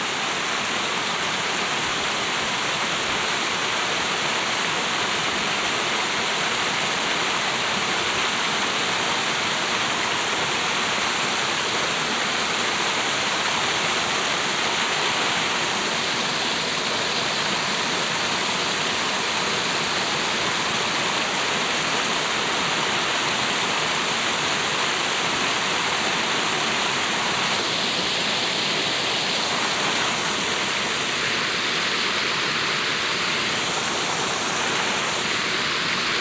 Sveio, Norwegen - Norway, Holsvik, water fall
The sound of a small water fall coming down the rocks here. Funny stereo effects by moving my head.
international sound scapes - topographic field recordings and social ambiences
20 July 2012, 17:30